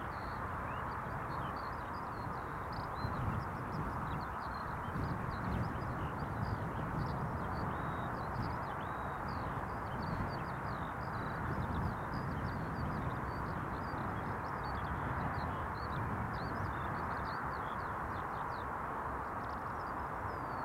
Contención Island Day 67 outer southwest - Walking to the sounds of Contención Island Day 67 Friday March 12th
The Drive Moor Place Woodlands Woodlands Avenue Westfield Grandstand Road
Back on rough grass
a lark sings beneath the wind
Distant figures
insect small
a lone runner strides
The smooth horizon north
prickles with buildings in the south